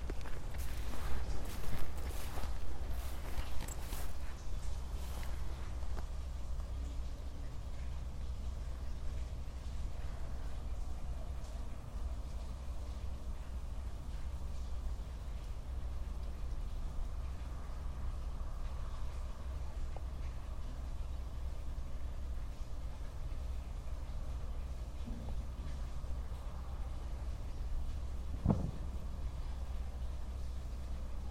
Via Pusterla, Saluzzo CN, Italia - Garden Robert Baden Powell
Recorded with a Tascam DR-700 in Garden Robert Baden Powell, APM PLAY IN workshop 2016 (Take 2)
Saluzzo CN, Italy, 27 October 2016